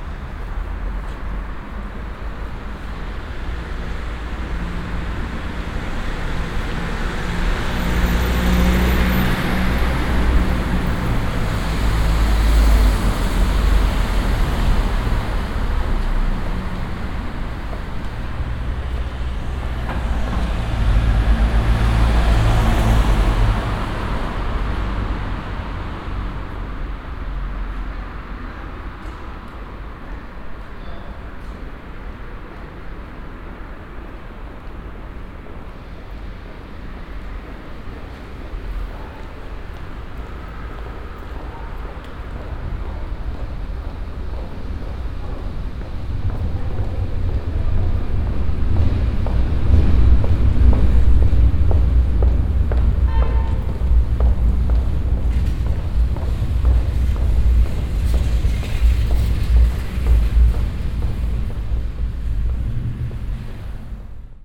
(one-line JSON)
{"title": "cologne, marzellenstr-eigelstein, unterführung", "date": "2008-12-30 14:32:00", "description": "unterführung nachmittags, vorbeifahrende pkws, fahrardfahrer und fußgänger, zugüberfahrt\nsoundmap nrw: social ambiences/ listen to the people - in & outdoor nearfield recordings", "latitude": "50.95", "longitude": "6.96", "altitude": "54", "timezone": "Europe/Berlin"}